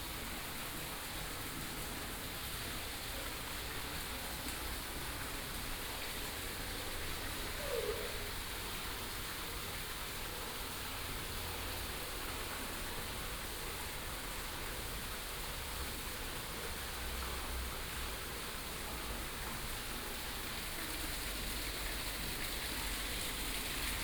Botanischer Garten, Philosophenweg, Oldenburg - tropical house
short walk within the small tropical house of the Botanischer Garten, Oldenburg.
(Sony PCM D50, OKM2)
Germany